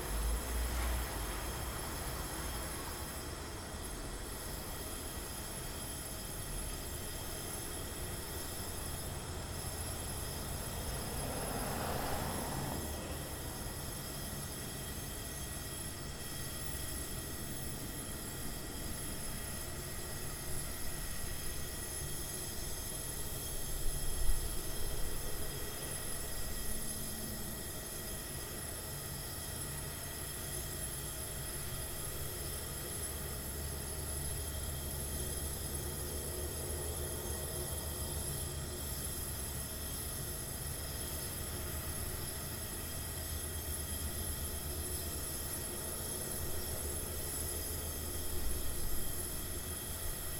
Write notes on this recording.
This recording was set on a front porch of a house in Iowa City on the queiter part of town at midnight. It captures some late-night people out and about in the city of Iowa City.